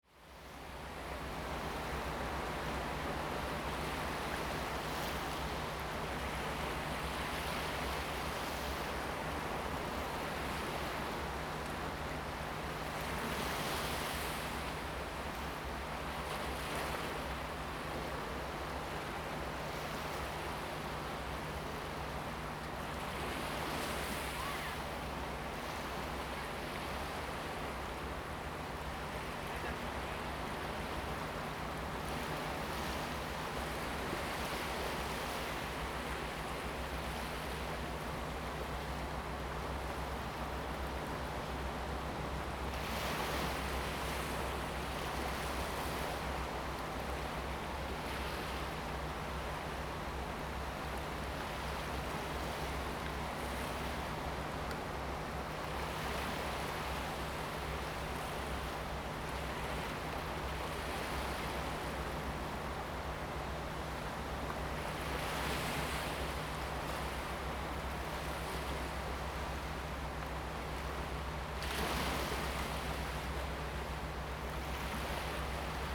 蛤板灣, Hsiao Liouciou Island - At the beach
Sound of the waves, At the beach
Zoom H2n MS+XY
Liuqiu Township, Pingtung County, Taiwan